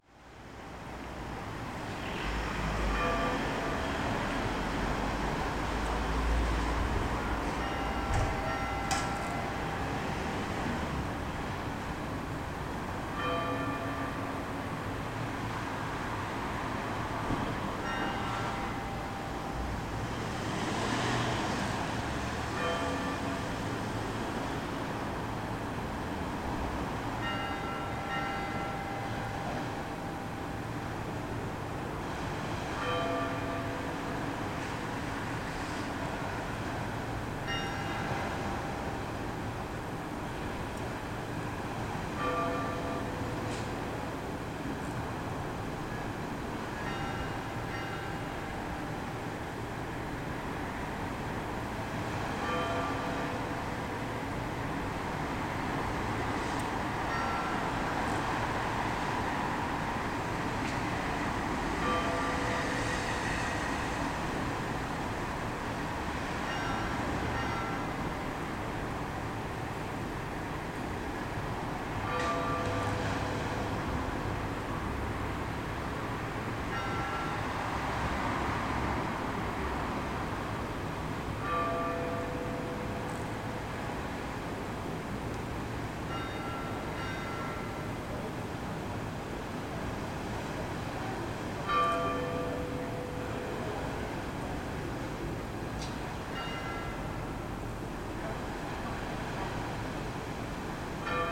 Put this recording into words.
standing in the hotel balcony. Easter evening. calling to mass